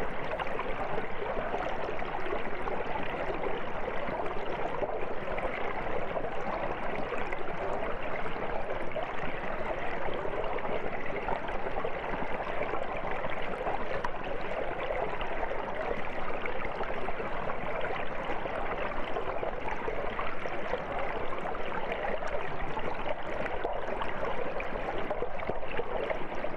Torver Beck, Coniston, Cumbria - Torver Beck Footbridge
Sounds recorded as part of a sound walk using wireless headphones with Penny Bridge Academy.
Two Hydrophones in the beck under a wooden footbridge. It has been very dry so the beck is pretty low.
UK, 20 May 2019